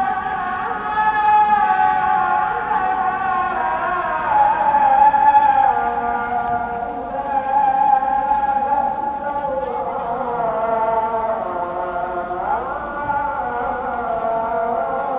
{"title": "Eminonu, Yeni Camii, Istanbul", "date": "2011-02-19 14:20:00", "description": "call for prayer, singing, people walking by", "latitude": "41.02", "longitude": "28.97", "altitude": "11", "timezone": "Europe/Istanbul"}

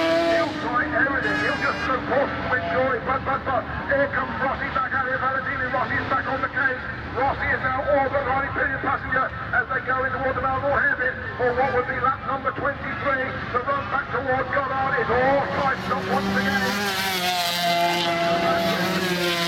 {"title": "Castle Donington, UK - British Motorcycle Grand Prix 2000", "date": "2000-07-09 13:30:00", "description": "500cc motorcycle race ... part two ... Starkeys ... Donington Park ... the race and all associated background noise ... Sony ECM 959 one point stereo mic to Sony Minidisk ...", "latitude": "52.83", "longitude": "-1.37", "altitude": "81", "timezone": "Europe/London"}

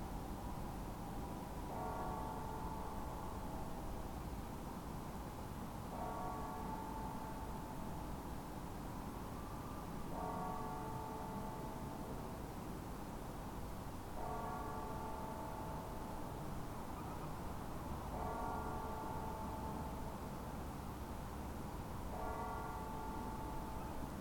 {"title": "Na Náspu, Praha, Czechia - Prague just before lockdown", "date": "2020-03-16 10:57:00", "description": "I recently went out of my apartment into the streets of an unknown city; one largely without people. Each year, Prague welcomes millions of visitors. They swarm the attractions, they choke the streets. They guzzle the beer and drop wads of cash on tasteless trifles. Some swoon at the complete Baroqueness of the city; some leave grafitti on the precious monuments. Sometimes, they carelessly laugh at things taken seriously by Praguers, and sometimes they stand in awe at things the locals find banal. Today, it is as if a tornado has come and swept them all away.\nNot just the visitors, but the locals, too. By government decree, beginning at midnight 16 March, 2020, anyone without a valid reason to be out in public must stay at home. It is for our own safety, and the safety of others.\nThe evening before that, I went out one last time before the curtain is drawn. The planet Venus, bright enough to cast a shadow, hangs in the northern sky.", "latitude": "50.09", "longitude": "14.39", "altitude": "266", "timezone": "Europe/Prague"}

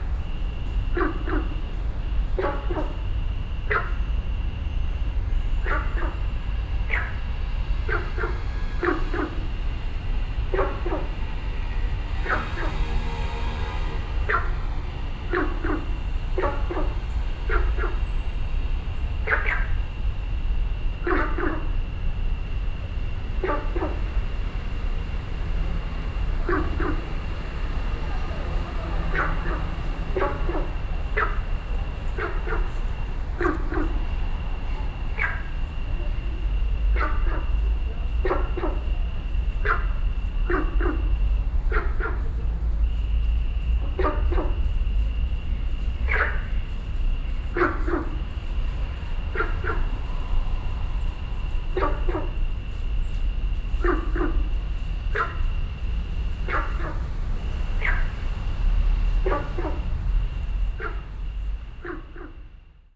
{"title": "National Chiang Kai-shek Memorial Hall, Taipei - Frogs calling", "date": "2012-06-04 18:51:00", "description": "Frogs calling, Sony PCM D50 + Soundman OKM II", "latitude": "25.03", "longitude": "121.52", "altitude": "16", "timezone": "Asia/Taipei"}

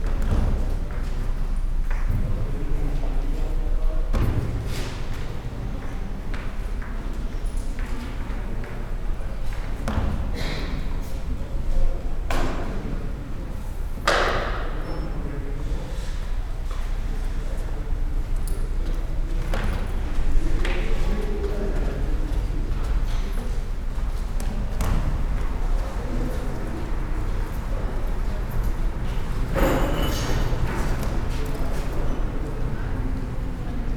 {"title": "city library, Kleistforum, Hamm, Germany - library hum Friday eve", "date": "2015-06-12 17:55:00", "description": "hum of the building with its open staircase; steps and voices over 3 floors; bus station roaring outside", "latitude": "51.68", "longitude": "7.81", "altitude": "66", "timezone": "Europe/Berlin"}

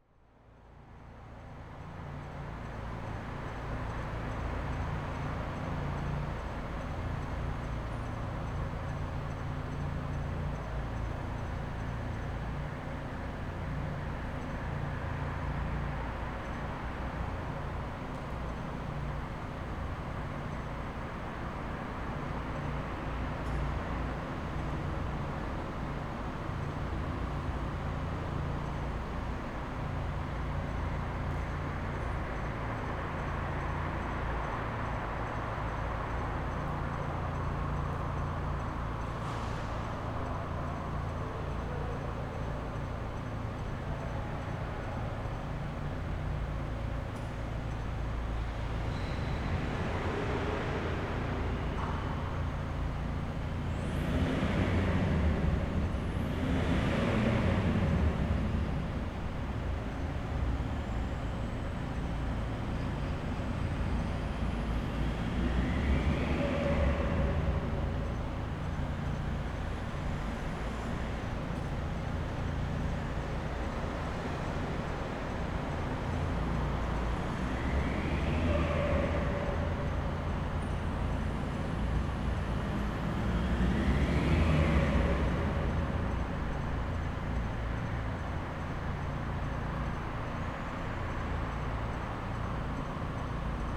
{"title": "Revontuli parking garage, Rovaniemi, Finland - Vehicles driving inside a parking garage", "date": "2020-06-18 22:58:00", "description": "Late at night, some vehicles are running up and down the parking garage, revving their engines. Zoom H5 with default X/Y capsule.", "latitude": "66.50", "longitude": "25.72", "altitude": "84", "timezone": "Europe/Helsinki"}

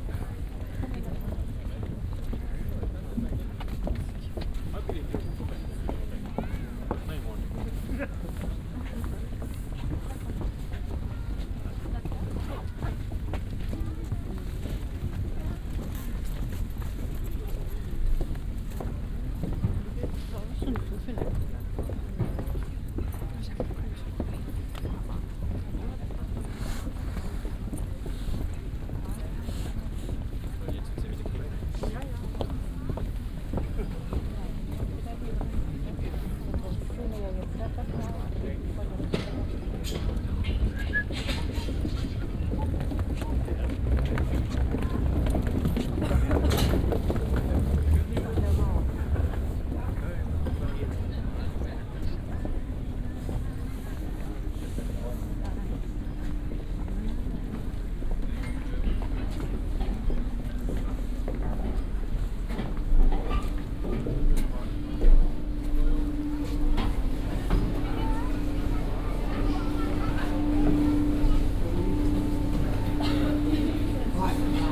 {"title": "Berlin, Kladow, Deutschland - jetty, public transport ferry arrives, ambience", "date": "2014-12-06 16:20:00", "description": "Berlin Kladow, waiting for the public transport ferry to arrive, passengers leaving the boat, jetty ambience\n(Sony PCM D50, OKM2)", "latitude": "52.45", "longitude": "13.15", "altitude": "31", "timezone": "Europe/Berlin"}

